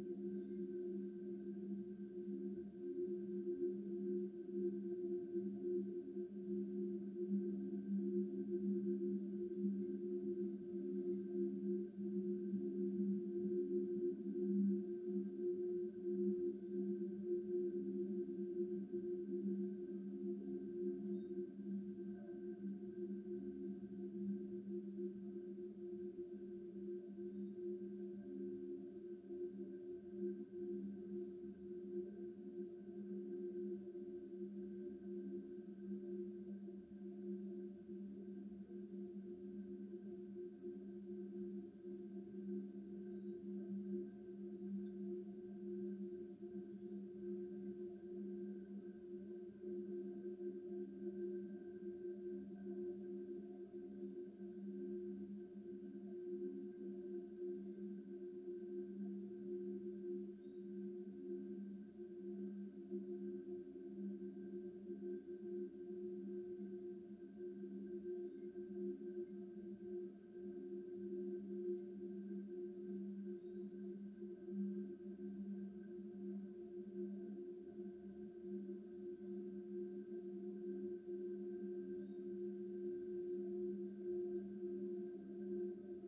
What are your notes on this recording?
Recording of the drone from the Labadie Energy Center power plant captured by contact mics attached to the coupling on the end of a 3 foot in diameter steel pipe abandoned in the woods in Klondike Park.